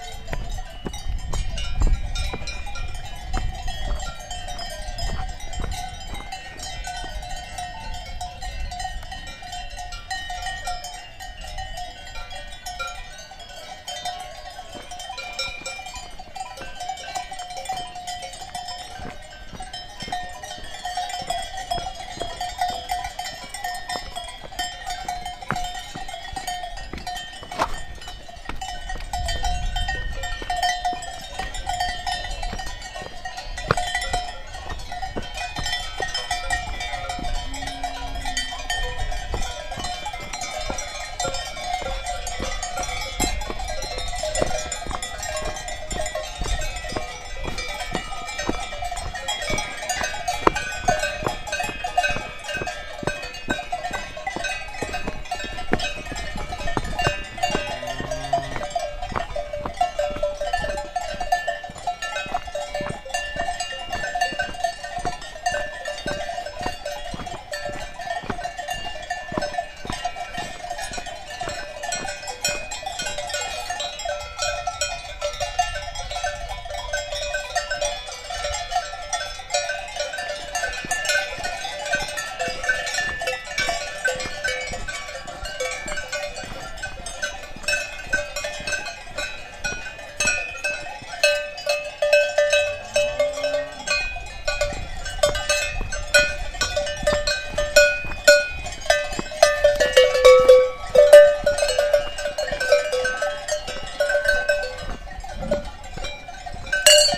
Pizol, Switzerland, Five Lakes Hiking Tour
Hiking in Switzerland, 2300 m altitude, trying to catch the last cable car which should take us back to the valley.... Five Lakes Hiking Route, Pizol, August 2009.
7 August 2009, ~16:00